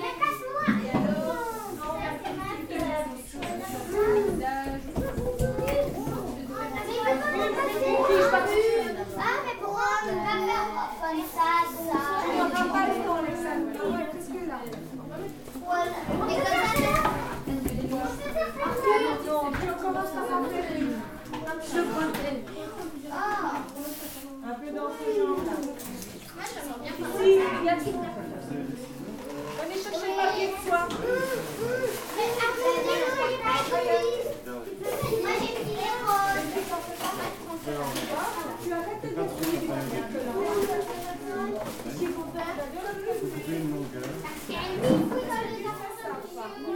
Court-St.-Étienne, Belgique - The nursery
A nursery, inside the Sart school. It looks like dissipated, but children are really working !